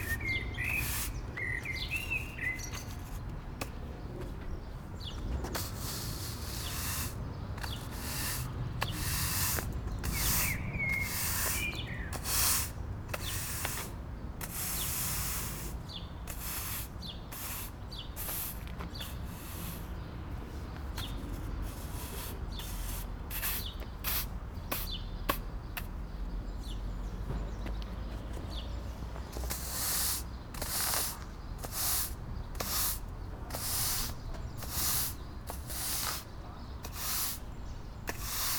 Nürtingen, Deutschland - Swabian 'Kehrwoche'
PCM-D50
...when it's our week to clean the communal areas
Nürtingen, Germany